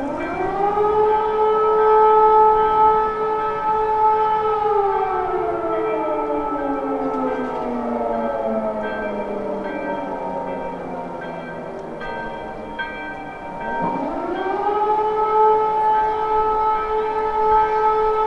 testing of warning system and church bells sounding at the same time